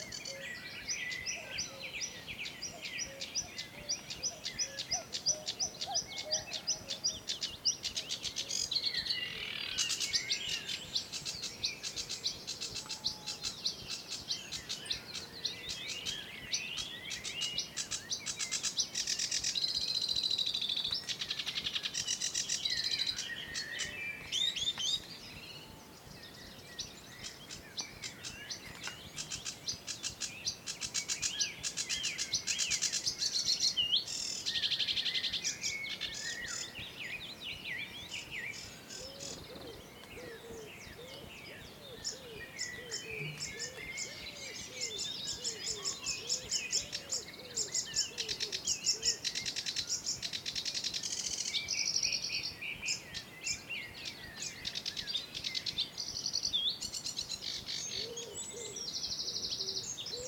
Derrysallagh, Geevagh, Co. Sligo, Ireland - Sedge Warbler, Wren, Cuckoo, Cows and Others
I can't remember what time it was exactly, early in the morning but after dawn. I walked down the drive and heard a bird singing that I'd never heard before. I snuck under the Horsechestnut trees and placed the microphone as near as I could to the bird (a Sedge Warbler). There's a nice mix of other singers and some reverberated mooos.